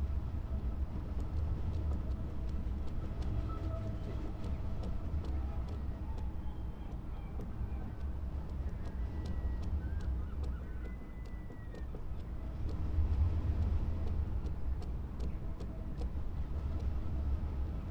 Crewe St, Seahouses, UK - flagpole and iron work in wind ...
flagpole lanyard and iron work in wind ... xlr sass to zoom h5 ... bird calls from ... herring gull ... starling ... grey heron ... jackdaw ... lesser black-backed gull ... unedited ... extended recording ...
18 November 2021, 07:25